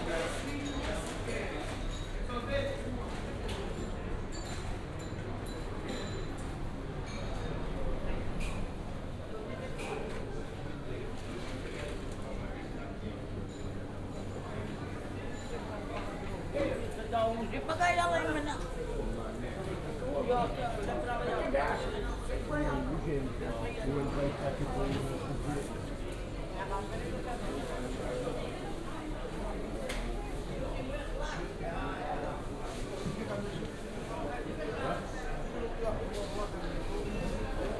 Funchal, Mercado dos Lavradores - Mercado dos Lavradores
(binaural) walking around worker's market in Funchal. it wasn't very busy at that time. vendors setting up their stands, laying out goods for sale. the fish are being clean out and cut in the other room.